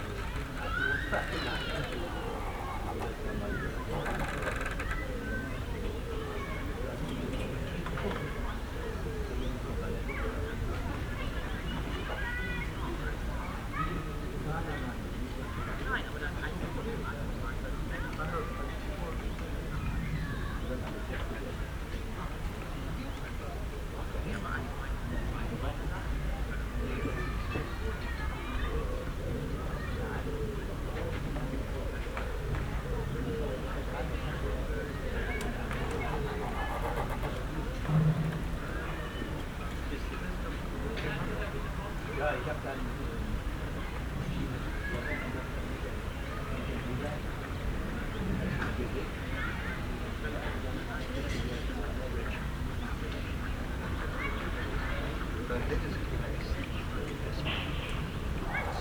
workum, het zool: marina, berth e - the city, the country & me: marina berth
the city, the country & me: august 4, 2012
Workum, The Netherlands, 4 August 2012